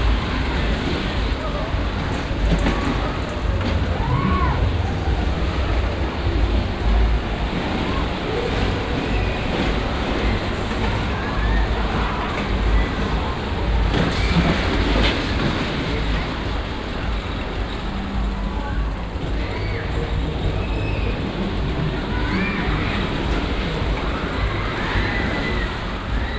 berlin, dircksenstr. - christmas market
christmas market, violent fairground rides
Berlin, Germany, 13 December 2009